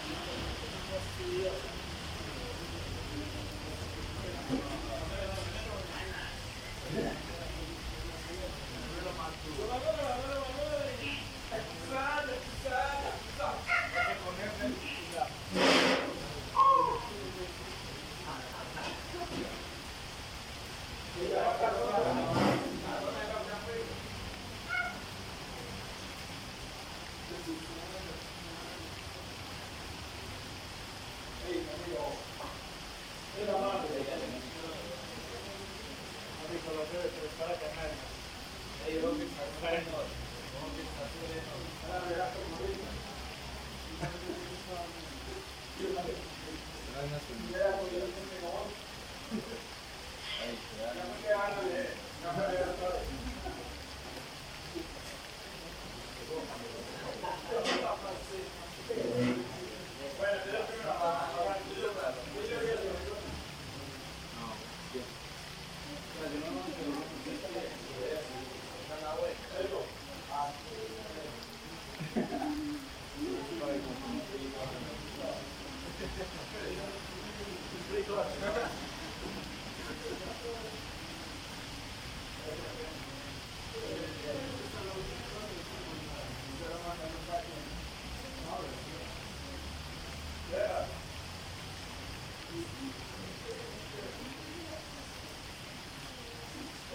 Descripción
Sonido Tónico: Lluvia, gente hablando
Señal sonora: Carros pasando y grito
Micrófono dinámico (Celular)
Altura 1.33 cm
Duración 3:13
Grabado por Luis Miguel Henao - Daniel Zuluaga Pérez
Región Andina, Colombia, September 2021